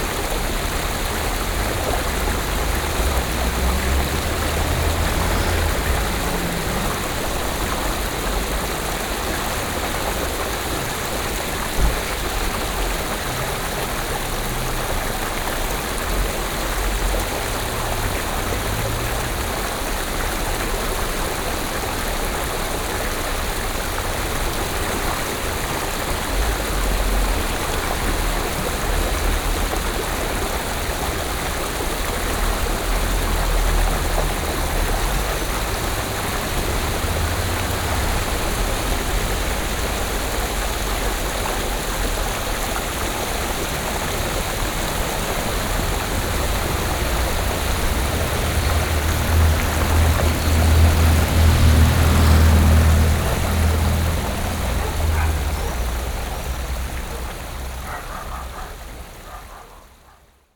Montluel, Cours Condé, La Sereine
Montluel, France, July 2011